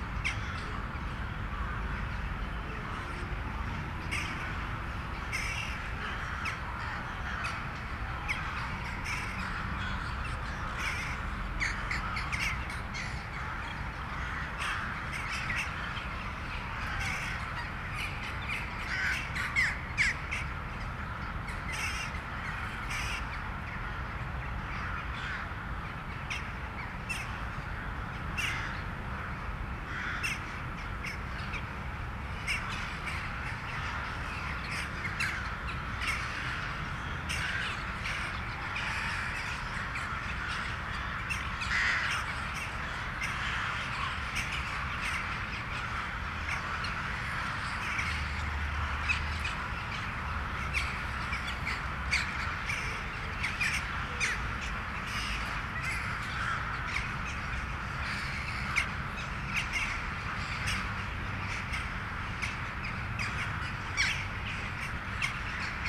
Strada Doamnei, București, Romania - migration of crows
recording from the window of Czech Embassy early morning: thousands of crows woke up to move south of north while singing, i could not judge where they are going.